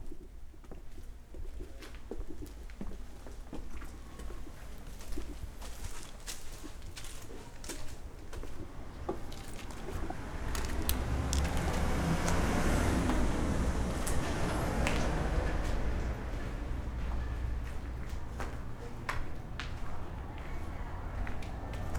Avenida da Liberdade Fundão, Portugal - Cinema Gardunha
Sounds from an abandoned movie theater
30 July 2014